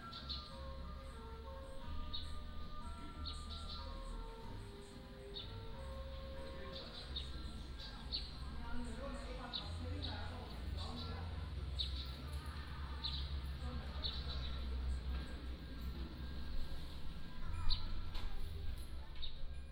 Small village streets, Small village mall, Birds singing
福建省 (Fujian), Mainland - Taiwan Border, 15 October 2014, ~09:00